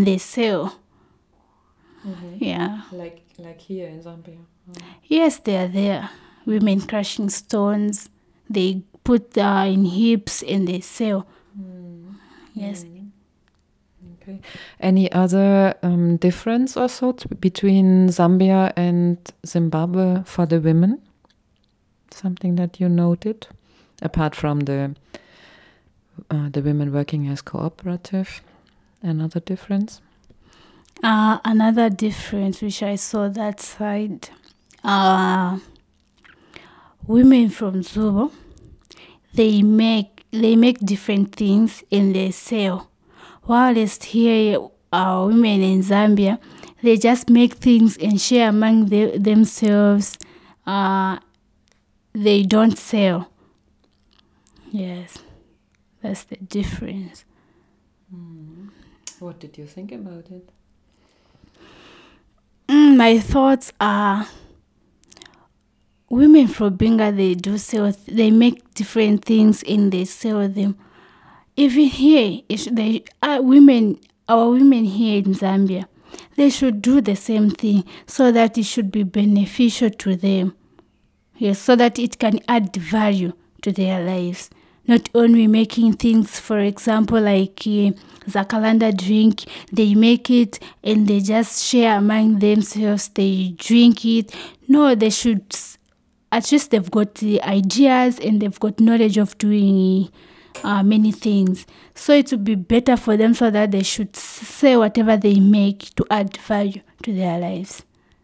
The interview with Nosiku Mundia was recorded shortly after her return from Binga where she accompanied Maria Ntandiyana and Cleopatra Nchite, two representatives of Sinazongwe women clubs on a visit to the women’s organisation Zubo Trust. Nosiku is still excited. As for the other two women, it was her very first international journey into unknown territory... in the interview, Nosiko reflects on her role as the record-keeper, the one who documents the event in service for the others to assist memory and for those back home so even they may learn by listening to the recordings. Here i ask her about any differences in the lives of women she may have noticed...
the entire interview with Nosiku is archived here:
Sinazongwe, Zambia, 27 August 2018, 16:30